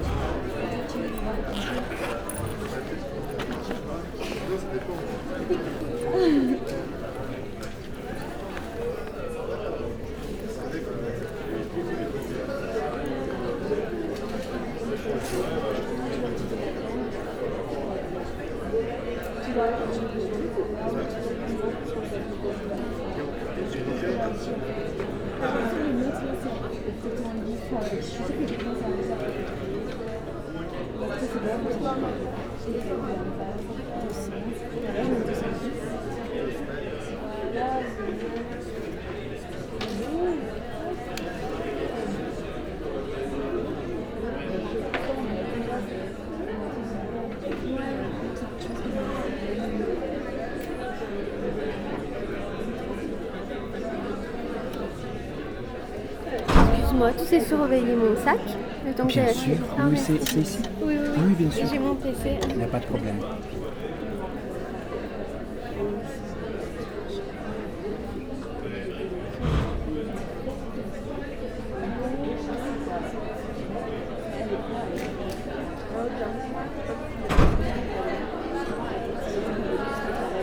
A pause in the Montesquieu auditoire, students are joking everywhere.
Belgium, 11 March 2016